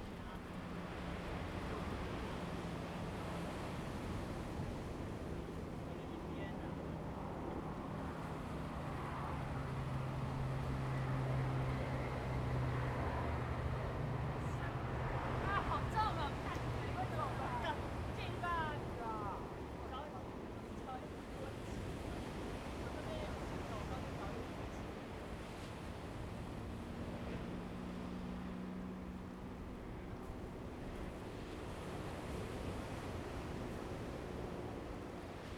大鳥村, Dawu Township - At the seaside
Sound of the waves, At the seaside
Zoom H2n MS +XY
Taitung County, Taiwan, September 2014